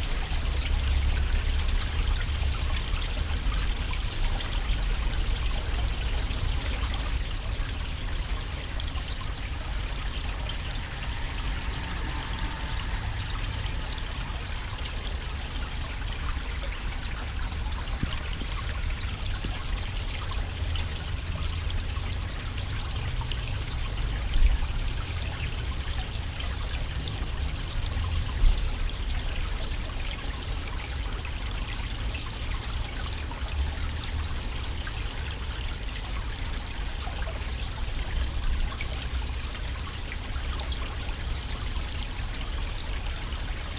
haan, neustrasse, itterbrücke
kleine talidylle - plätschern der itter - vogel zwitschern - im hintergrund verkehr und flugzeuge, fussgänger gehen über holzbrücke
- soundmap nrw
project: social ambiences/ listen to the people - in & outdoor nearfield recordings